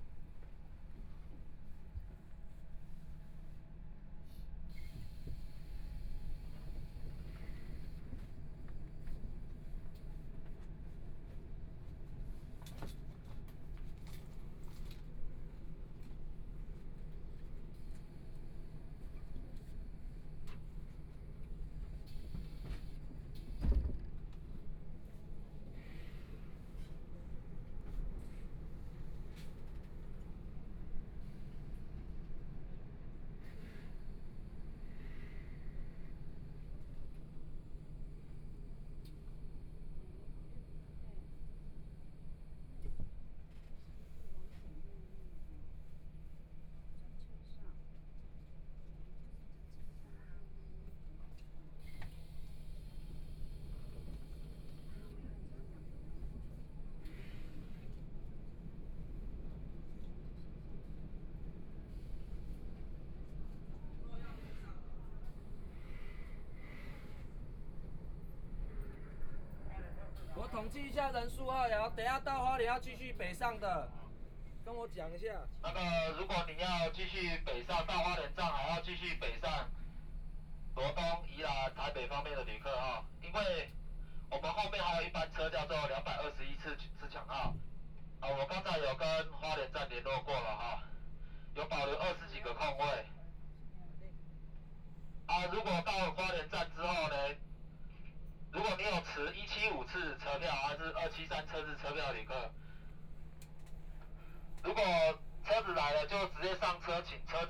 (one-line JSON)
{"title": "Ji'an Township, Hualien County - After the accident", "date": "2014-01-18 13:30:00", "description": "Interior of the case, The dialogue between the passenger, Train message broadcasting, This recording is only part of the interceptionTrain Parking, Binaural recordings, Zoom H4n+ Soundman OKM II", "latitude": "23.94", "longitude": "121.54", "timezone": "Asia/Taipei"}